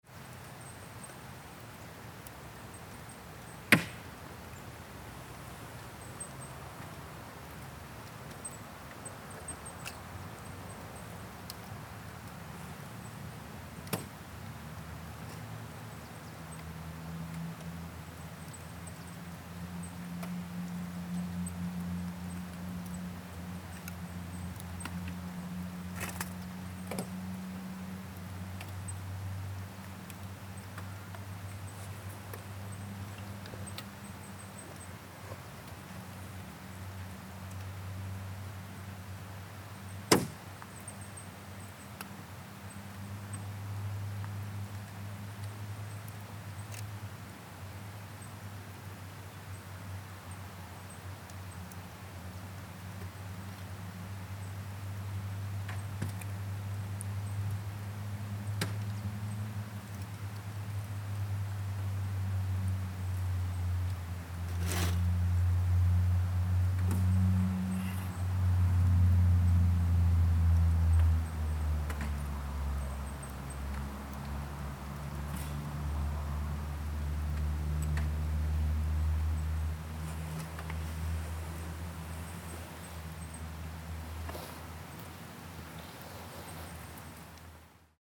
Valici, Rjecina river, frozen river
Ice melting and cracking on frozen Rjecina river by natural way - triggered by Winter Sun at noon.